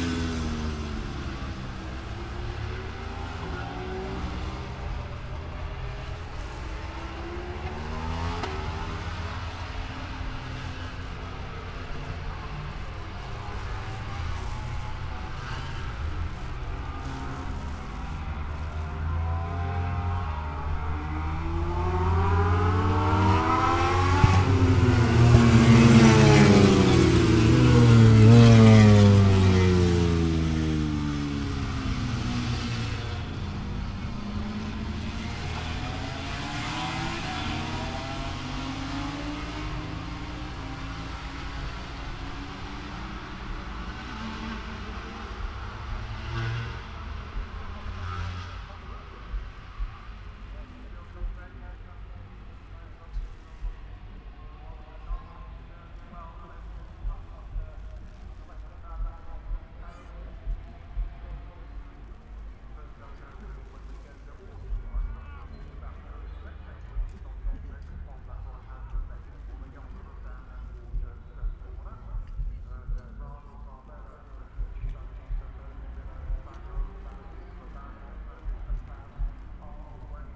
moto grand prix qualifying one ... Vale ... Silverstone ... open lavalier mics clipped to clothes pegs fastened to sandwich box on collapsible chair ... umbrella keeping the rain off ... very wet ... associated noise ... rain on umbrella ... music from onsite disco ... etc ...